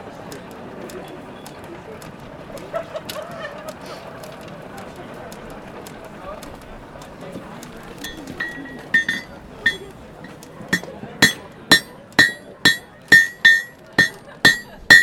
Siegburg, Deutschland - Schmied auf dem mittelalterlichen Weihnachtsmarkt / Blacksmith on the medieval Christmas market
Der Schmied auf dem Markt heizt sein Schmiedefeuer mit einem fußgetriebenen Blasebalg. Dann schmiedet er das weißglühende Werkstück.
The blacksmith on the market heats up his forge with a foot driven bellows. Then he forges the incandescent workpiece.